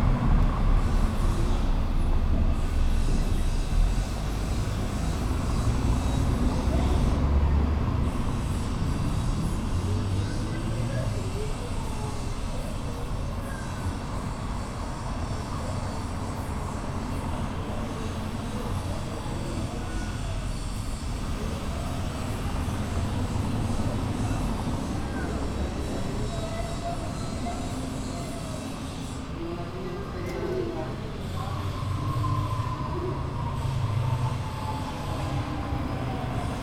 Alfaro, Obregon, León, Gto., Mexico - Pequeña plaza en la colonia Obregón.
Small plaza in neighborhood Obregon.
I made this recording on February 15, 2020, at 2:27 p.m.
I used a Tascam DR-05X with its built-in microphones and a Tascam WS-11 windshield.
Original Recording:
Type: Stereo
Pequeña plaza en la colonia Obregón.
Esta grabación la hice el 15 de febrero 2020 a las 14:27 horas.